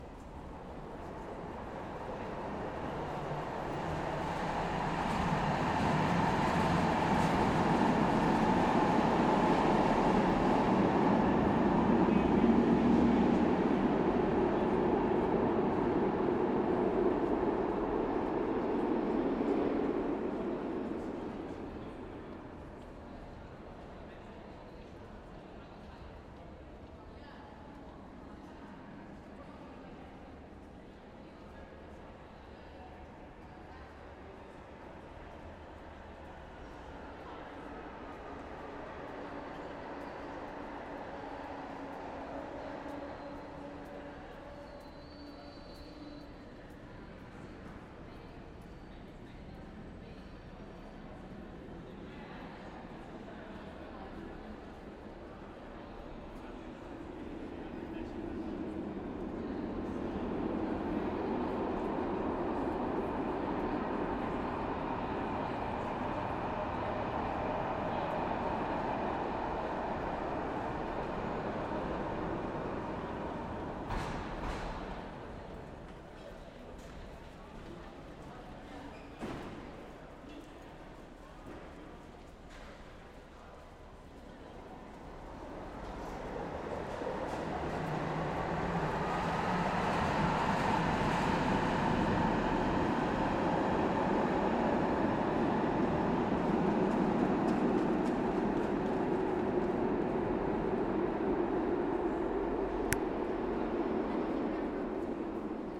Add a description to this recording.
A couples of trains passing through this underground railway station in Budapest. I was walking through here and the natural reverb of the place was so nice that i decided to take a few minutes and record it. Recorded with a Zoom H6 XY mic.